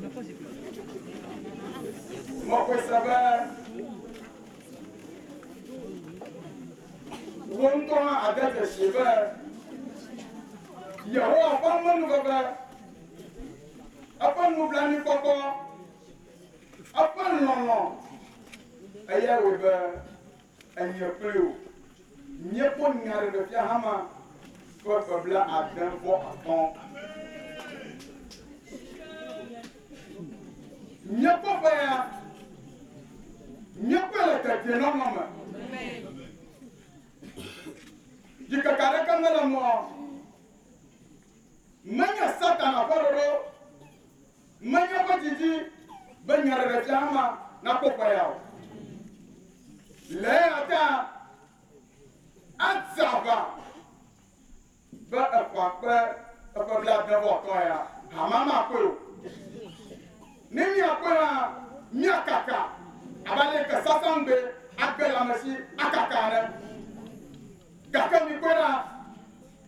Togbe Tawiah St, Ho, Ghana - church of ARS beginning of service
It was just after darkness fell we arrived at the church. Service was in the open air and a big fire was lightning the place. There we portrets of Wovenu and a small amplifier with microphone.